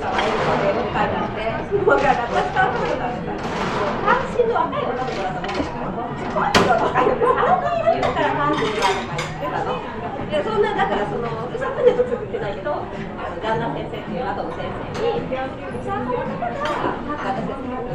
Cafe, Leninsky, Moscow, Russia - ReadyMade
ReadyMade coffee shop